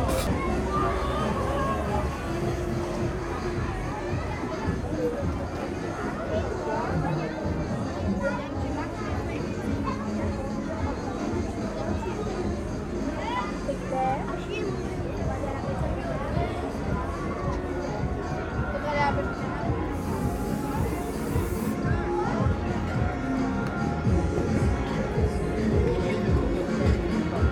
During the annual feast of Court-St-Etienne, there's a huge fun fair installed of the heart of the city. All is very hard to bear !!! There's so much noise of horrible conterfeit things... Recording begins with the all peruvian people selling fake commodities from China (here a small dog, a bird, and a slide with penguins). After, you dive in the horror film : carousel with horrible plastic music. The end is a merry go round for small children. All these sounds take part of a subculture, the fair ground ambience.
Court-St.-Étienne, Belgium, June 2016